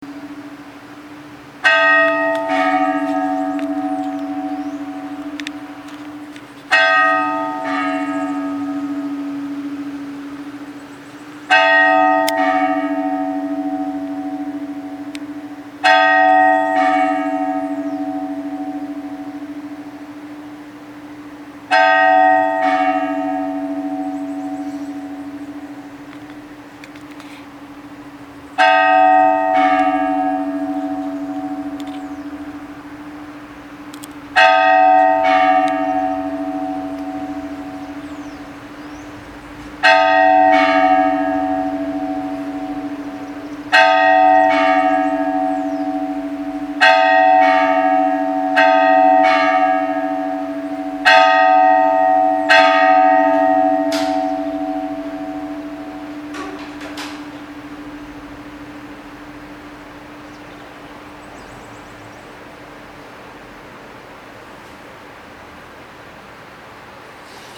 Cachoeira, Bahia, Brazil - Sino da Igreja Matriz de Cachoeira
Sexta-feira, sete da manhã... o sino da igreja toca.
Gravado com um sony icd px312.